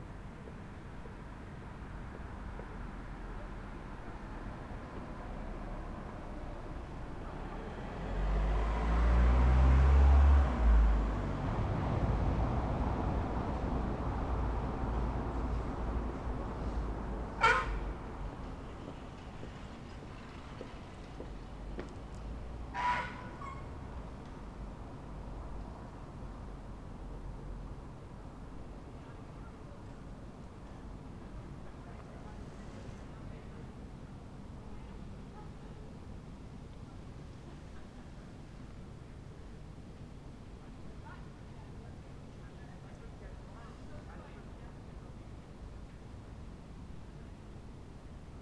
Berlin: Vermessungspunkt Friedelstraße / Maybachufer - Klangvermessung Kreuzkölln ::: 08.09.2010 ::: 02:08
8 September 2010, 02:08